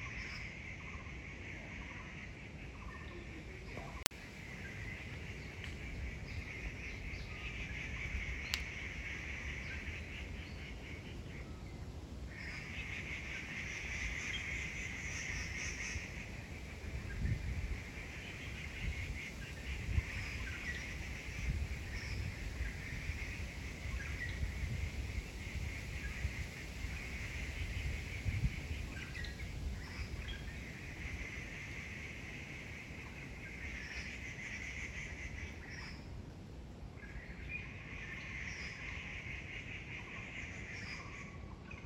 110台灣台北市信義區松山路678-1號 - 林下烏聲
林下烏聲